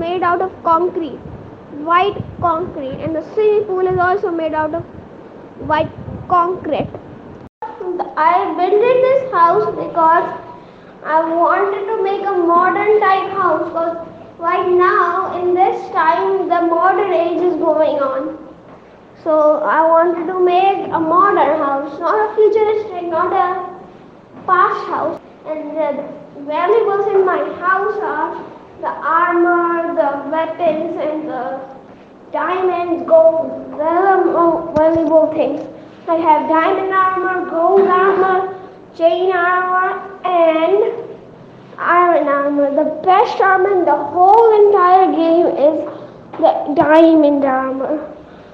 Beacon House Society Rd, Beacon House Society, Lahore, Punjab, Pakistan - my view
19 July 2018, ~08:00